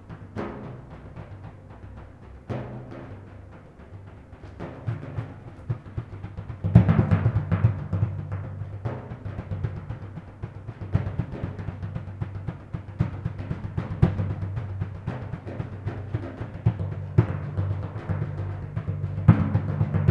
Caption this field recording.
rumble of a few kettledrums that are standing in the ballet practice room in the Grand Theater. (sony d50)